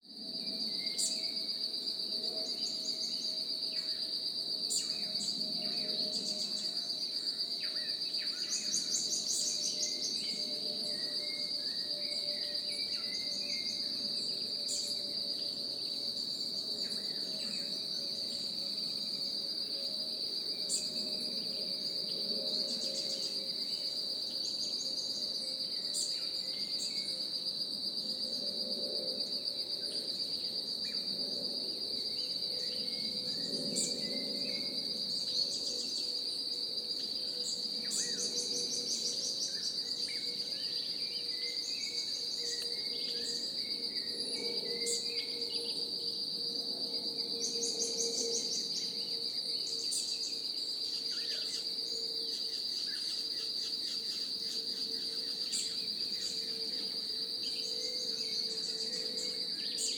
register of activity in the park
20 December 2016, ~08:00